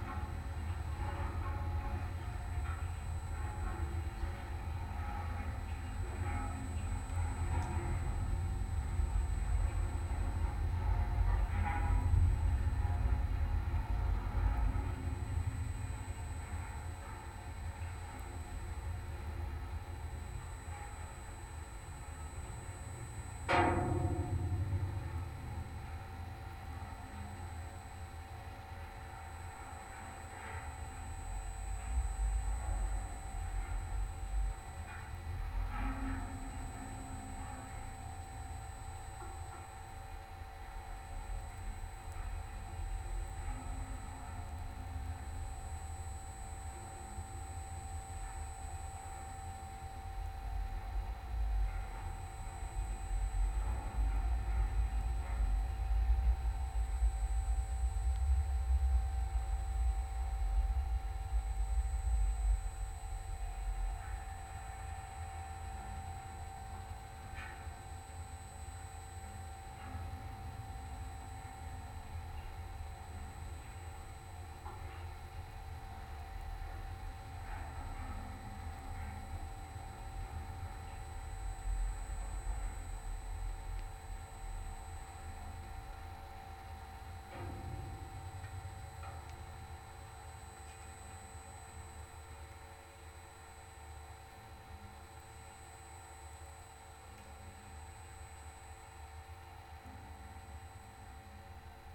Utena, Lithuania, study of abandoned lights tower
contact microphones on big metallic light tower. and surrounding electromagnetic field captured by Priezor
10 July 2018, ~19:00